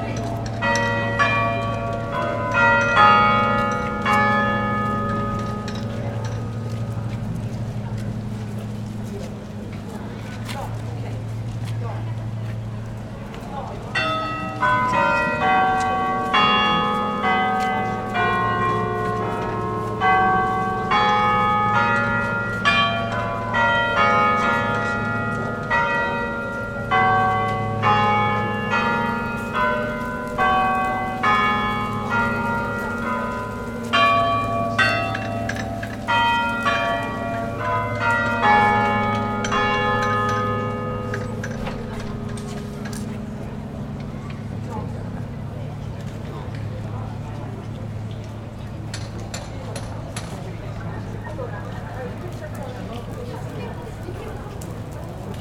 Kungsholmen, Stockholm, Suecia - town hall bells
Les dotze en punt a l'Stadshus.
Stadshus at twelve o'clock.
Las doze en punto en Stadhus